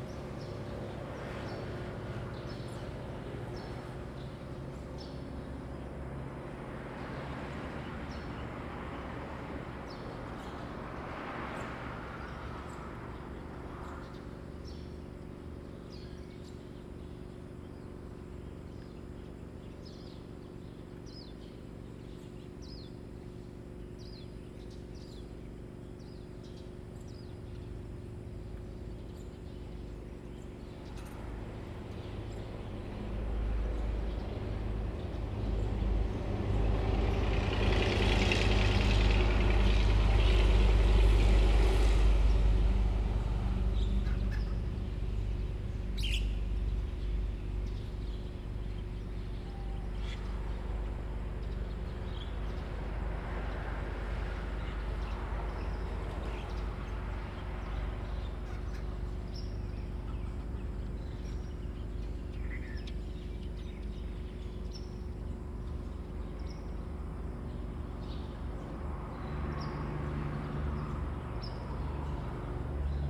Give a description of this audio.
Birdsong, Traffic Sound, Small village, Crowing sound, Zoom H2n MS +XY